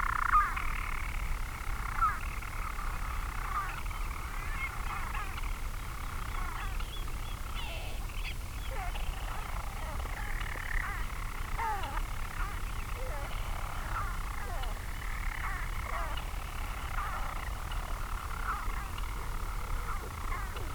Skokholm Island Bird Observatory ... storm petrel singing ..? birds nest in chambers in the dry stone walls ... the birds move up and down the space ... they also rotate while singing ... lots of thoughts that this was two males in adjacent spaces ... open lavalier mics clipped to a sandwich box ... on a bag close to the wall ...
Haverfordwest, UK, 16 May 2016, 03:20